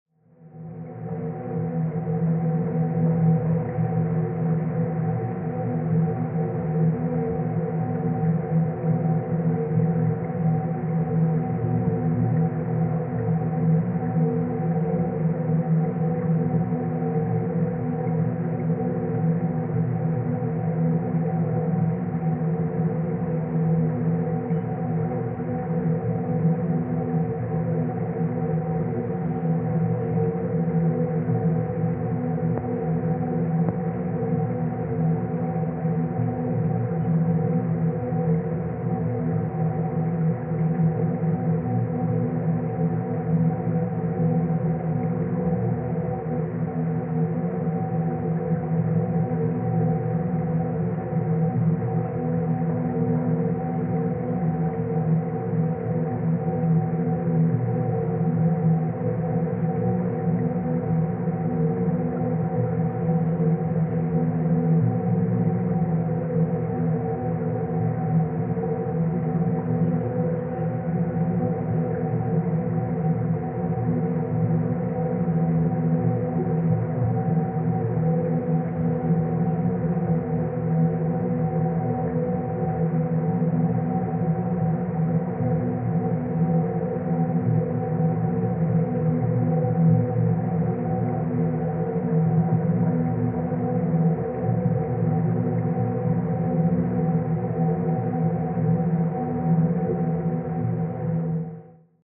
{
  "title": "Walking Holme Sewer Housing",
  "date": "2011-04-20 10:29:00",
  "description": "The valley sewer runs in a pipe in the river bed on this stretch. Occasionally there are square steel boxes. This is a contact mic on top of one.",
  "latitude": "53.56",
  "longitude": "-1.80",
  "altitude": "187",
  "timezone": "Europe/London"
}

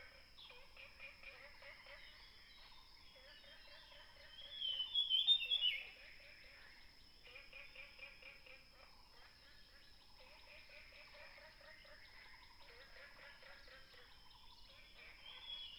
{
  "title": "華龍巷, 五城村, Yuchi Township - Frogs chirping and Birds singing",
  "date": "2016-04-26 06:47:00",
  "description": "Frogs chirping, Birds singing, in the woods",
  "latitude": "23.92",
  "longitude": "120.89",
  "altitude": "716",
  "timezone": "Asia/Taipei"
}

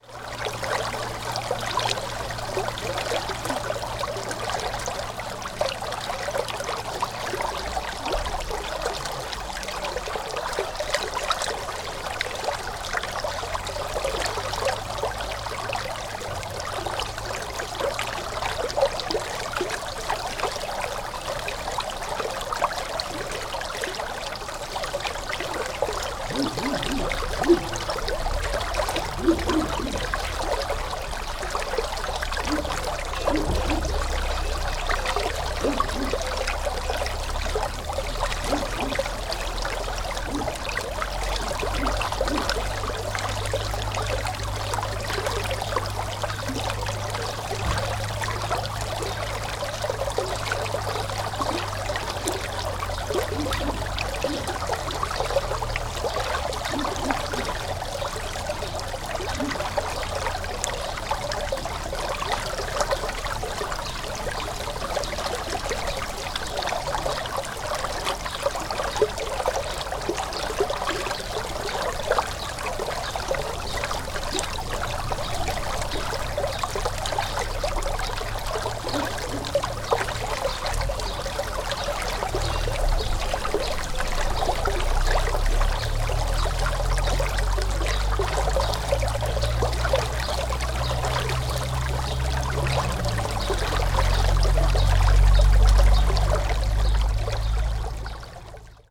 {
  "title": "Cerezales del Condado, León, España - Cerezales del Condado: riachuelo detrás del edificio de la Fundación",
  "date": "2015-06-13 13:24:00",
  "description": "Riachuelo detrás del edificio de la Fundación Cerezales",
  "latitude": "42.72",
  "longitude": "-5.36",
  "altitude": "880",
  "timezone": "Europe/Madrid"
}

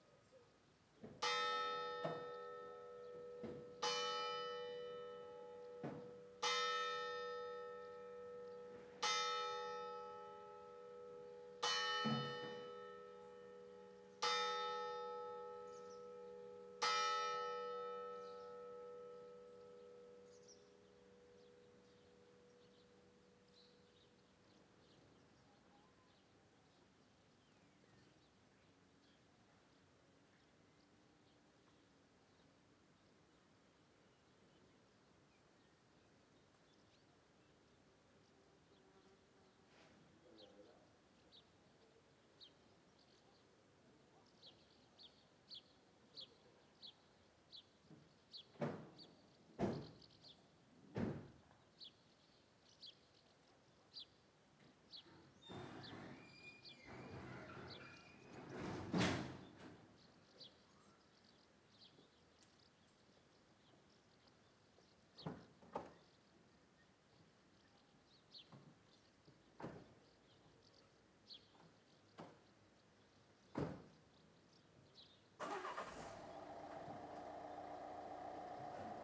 {
  "title": "Carrer Terrer, Bolulla, Alicante, Espagne - Bolulla - Espagne Ambiance de nuit + Ambiance du matin",
  "date": "2022-07-21 03:00:00",
  "description": "Bolulla - Province d'Alicante - Espagne\nAmbiance de nuit + cloche 4h + Ambiance du matin + cloche 7h\nÉcoute au casque préconisée\nZOOM F3 + AKG C451B",
  "latitude": "38.68",
  "longitude": "-0.11",
  "altitude": "227",
  "timezone": "Europe/Madrid"
}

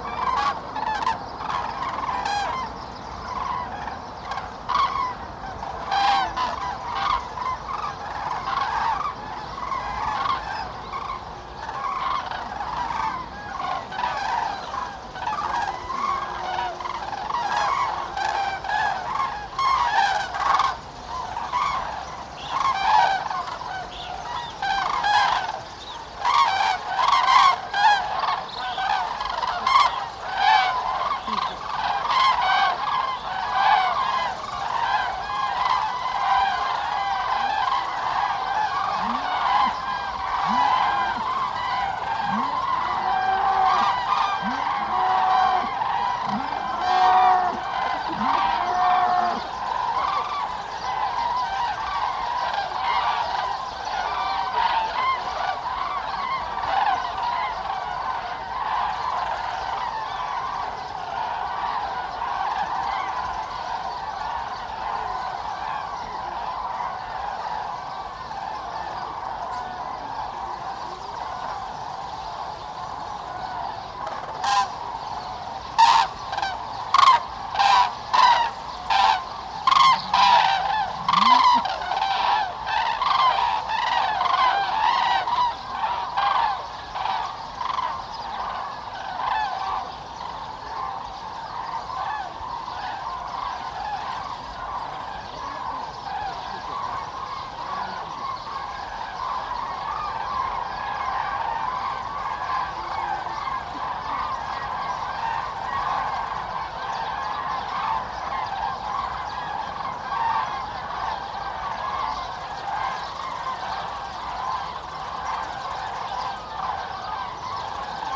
{
  "title": "Linum, Fehrbellin, Germany - Migrating cranes, multiple waves",
  "date": "2016-10-26 17:41:00",
  "description": "During their autumn migration northern Europe's cranes gather in tens of thousands at Linum to feed and rest before continuing their journey southwards to Spain. During daylight hours the birds disperse to the surrounding farmlands, but just before dusk, with meticulous punctuality, they return in great numbers to a small area of fields and pools close to the village to roost. It is an amazing sight accompanied by wonderful, evocative sound. Wave after wave of birds in flocks 20 to 80 strong pass overhead in ever evolving V-formations trumpeting as they fly. Equally punctually, crowds of human birdwatchers congregate to see them. Most enjoy the spectacle in silence, but there are always a few murmuring on phones or chatting throughout. Tegel airport is near by and the Berlin/Hamburg motorway just a kilometer away. Heavy trucks drone along the skyline. Tall poplar trees line the paths and yellowing leaves rustle and hiss in the wind. Cows bellow across the landscape.",
  "latitude": "52.76",
  "longitude": "12.89",
  "altitude": "33",
  "timezone": "Europe/Berlin"
}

